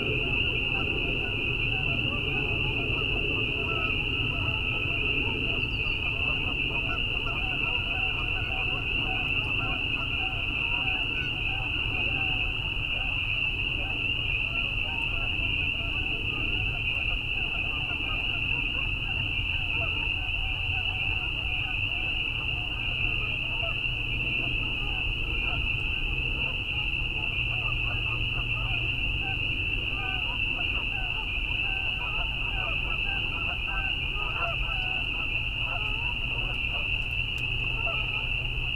Tiny Marsh, Tiny, Ontario - Tiny Marsh in the Evening
Tiny Marsh, Tiny, Ontario - May 14, 2019
Best heard through headphones.
Marsh sounds in the evening (9:30pm) Rural marsh with Canada Geese, Peepers. Jet flies overhead. Mics placed 0.5 Km into the marsh on a dike in open area. Natural reverb from trees surrounding open water. Road noise 2Km away. Recorded with ZoomF4 with UsiPro Omni mics. No post processing used of any kind. I am a beginner and looking for CC.